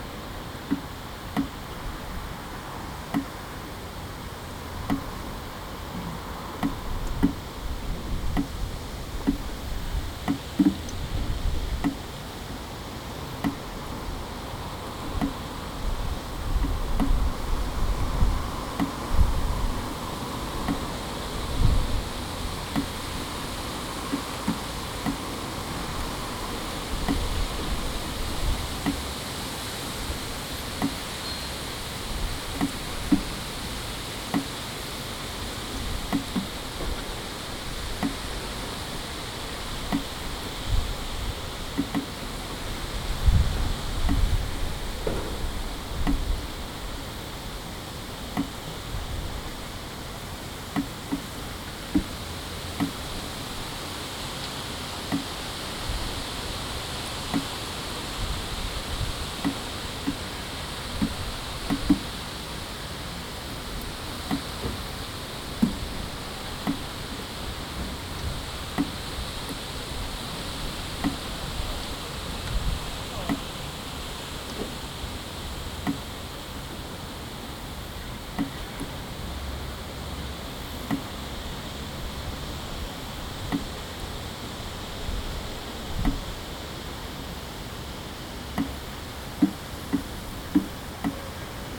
Ares, A Coruña, Spain - Rain25082015LCG
Recorded from an attic window using a Zoom H2n.
25 August 2015, 00:32